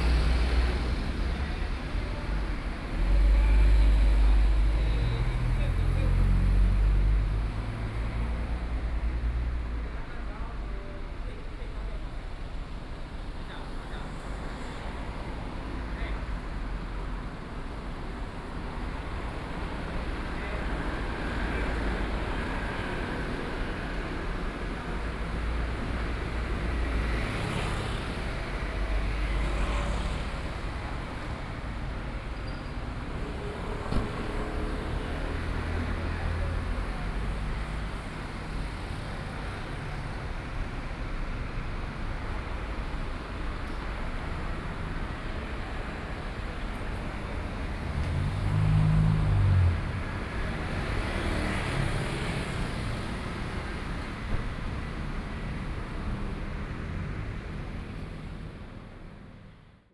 at the roadside, Traffic Sound
Sony PCM D50+ Soundman OKM II